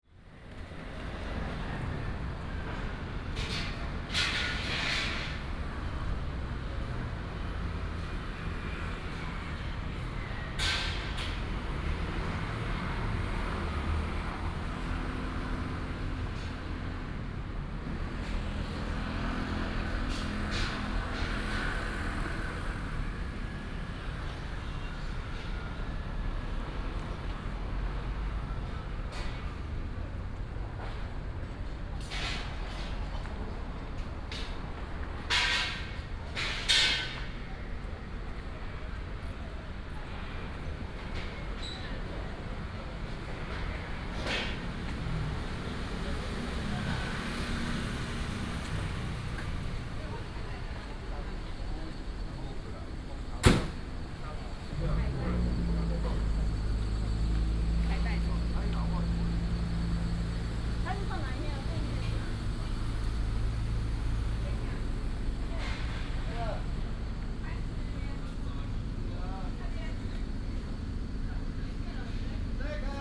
四知四村, Hsinchu City - Construction site
Site construction sound, traffic sound, Binaural recordings, Sony PCM D100+ Soundman OKM II